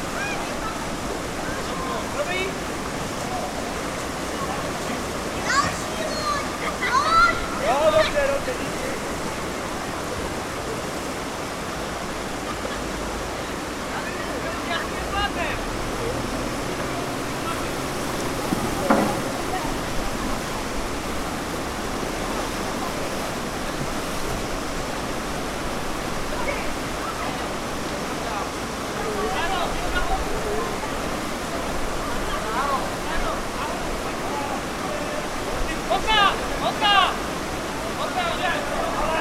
Český Krumlov, Tschechische Republik, Baustelle & kenternde Boote - baustelle & kenternde boote
Český Krumlov, Baustelle & kenternde Boote
Český Krumlov, Czech Republic